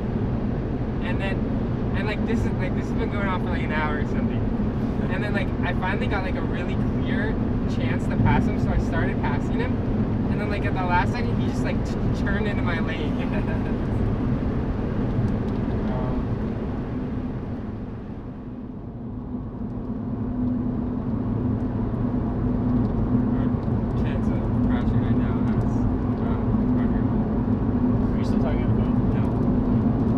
Acura on 5
This is a spliced recording of my trip down highway 5. My friend and I encounter a man and his child in a silver Acura sedan.